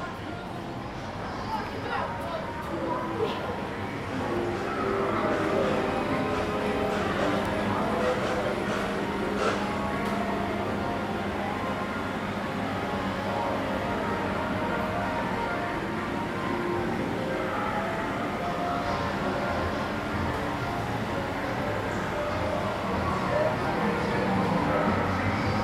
Timezone Video Game Arcade, Fremantle, Western Australia - Walking Into TimeZone From The Street
Timezone is a local video game arcade. I walk in from the street with my Zoom h2n, Xy/MS (surround) mode on, and do a walking lap, then walk back out onto the street again. Apologies for the clipping when I walked past the shuffle board. A huge guy was getting pretty involved in his game and was tonking the pucks with everything he had!
Fremantle WA, Australia, 1 November 2017